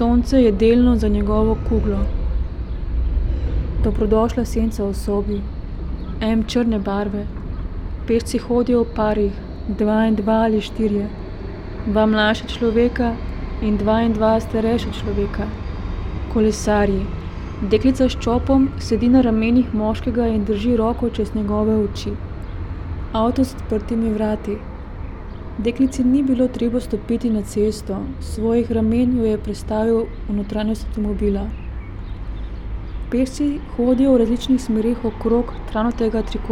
writing reading window, Karl Liebknecht Straße, Berlin, Germany - may 19 2013, 10:41
10:41 / 19. maj 2013
Dva psa, iste pasme, moški in ženska s povodcem. Trije kolesarji, eden ima pripeto prikolico za otroka. Vozeči šotor. Dva dečka, iste svetlo modre majčke in kratke hlače ter bela klobuka. Eden stoji na obodu travnate formacije. Bus TXL s harmoniko. Sonce je premaknilo sence dreves v smeri okna. Gruče sprehajalcev, kolone kolesarjev. M5 in M5 sta se srečala neposredno pod mojim oknom. Bus M48. Gruča ljudi prečkala prehod za pešce. Trije kolesarji v športni opravi, vsi s čeladami. Vrsta kolesarjev na nasprotni strani ceste. Štirikolesnik in oranžna čelada, trije motorji. Siv avto. M4 zavija. Trije ljudje vstopajo v bel avto. Moder avto. Rdeč, oranžen, srebrn, srebrn, črn. Rumen motor.
Kolesarji se nabirajo pred semaforjem.
Srebrn vlak zgoraj z rumenimi črtami.
Senca televizijskega stolpa je bližje oknu. Sonce je delno za njegovo kuglo. Dobrodošla senca v sobi.
M črne barve.
Pešci hodijo v parih, dva in dva ali štirje.